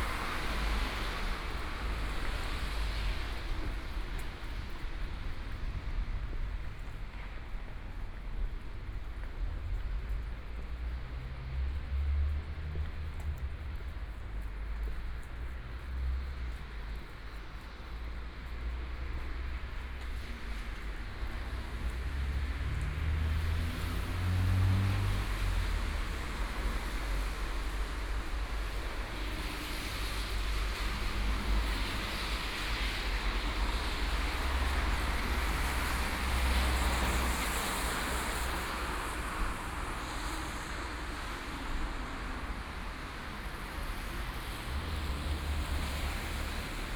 Baknhofplatz, Munich 德國 - In front of the station square
In front of the station square, Traffic sound